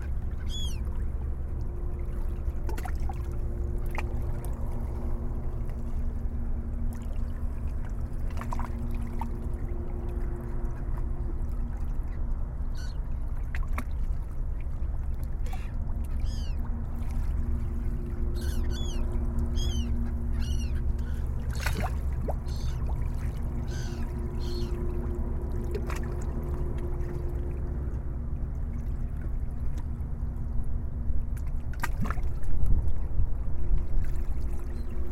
porto di Palermo (Romanlux) 6/2/10 h 10,30
mare tranquillo con gabbiani e nave che parte. (EDIROL R-09hr)